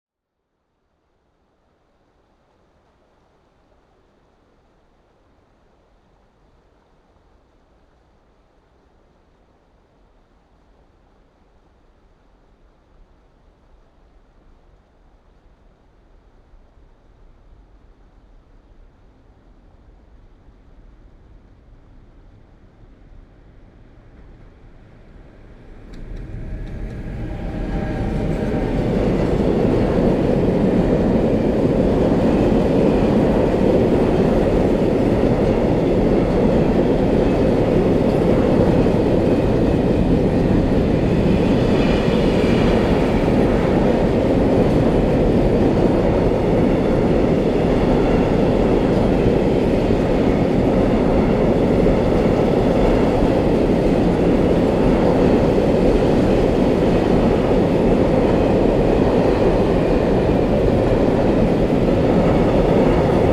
Recording of trains on "Red Bridge" in Bratislava, at this location railway line leads through city forest. Passenger train, freight trains.
Bratislavský kraj, Slovensko, 25 December 2020, 21:34